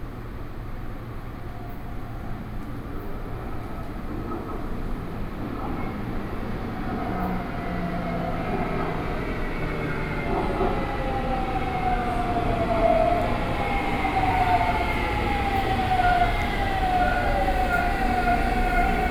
Jingmei Station, Taipei, Taiwan - In the MRT stations
Taipei City, Taiwan, 7 November 2012, 07:56